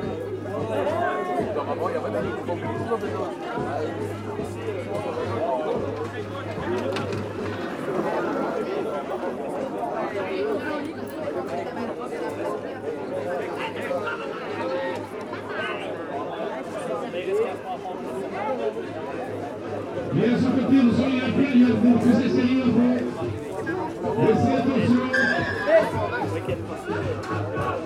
Walhain, Belgique - Local festivity
A local festivity in Perbais. This a cuistax race. This small village is very active in all kind of feasts.
Walhain, Belgium